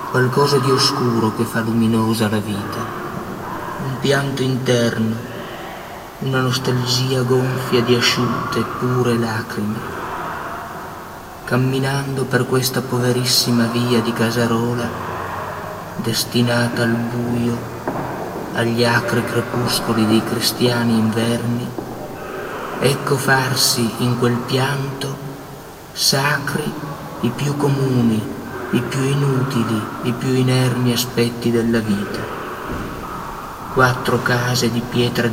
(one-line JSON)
{"title": "central pavilion, Giardini, Venice - Fabio Mauri e Pier Paolo Pasolini alle prove di Che cosa è il Fascismo 1971, 2005", "date": "2015-05-06 18:54:00", "latitude": "45.43", "longitude": "12.36", "altitude": "5", "timezone": "Europe/Rome"}